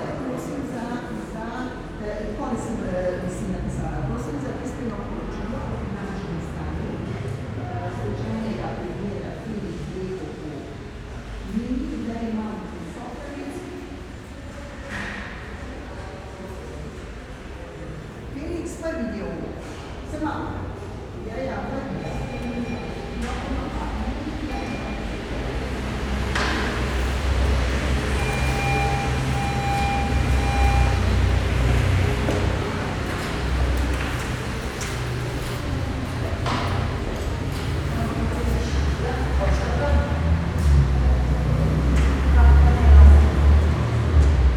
post office, Slovenska cesta, Ljubljana - post office ambience
walking around in the main post office
(Sony PCM D50, DPA4060)